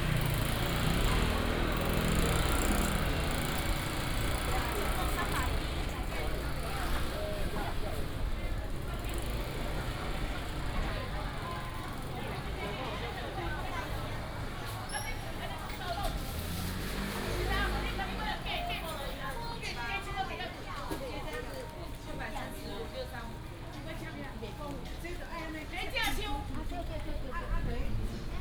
in the alley, Traditional market, Traffic sound